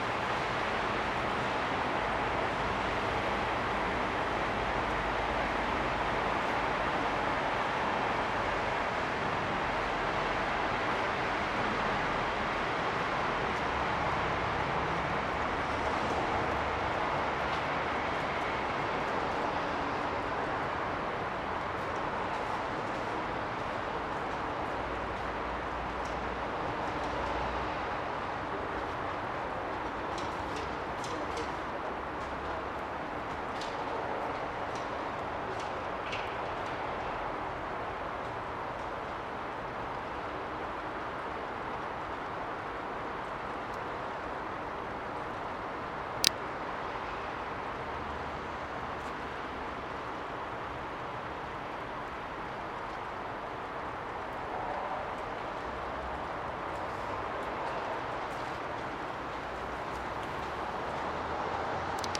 Ленинский пр-т., Москва, Россия - Ordzhonikidze street
The beginning of Ordzhonikidze street. You can hear cars passing through puddles, snow melting, and water dripping from the roofs. Warm winter.
Центральный федеральный округ, Россия, 29 January 2020, 22:02